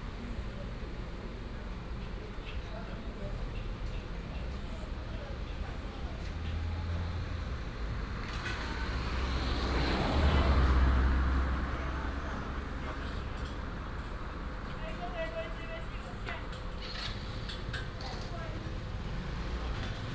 太麻里鄉溪蒲橋, Taitung County - Village Restaurant Area
Village Restaurant Area, traffic sound
Binaural recordings, Sony PCM D100+ Soundman OKM II